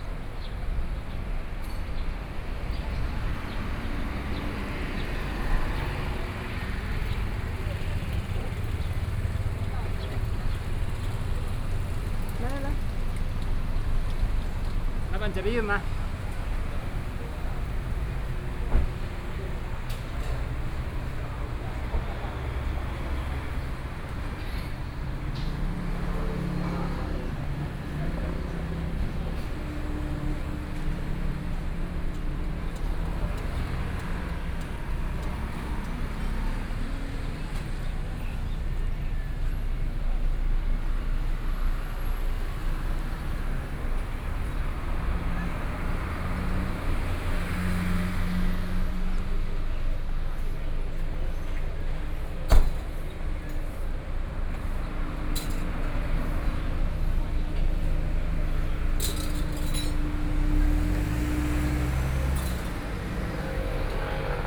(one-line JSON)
{"title": "Sec, Yuanshan Rd., Yuanshan Township - walking on the Road", "date": "2014-07-22 12:35:00", "description": "walking on the Road, Traffic Sound, Various shops sound\nSony PCM D50+ Soundman OKM II", "latitude": "24.74", "longitude": "121.72", "altitude": "16", "timezone": "Asia/Taipei"}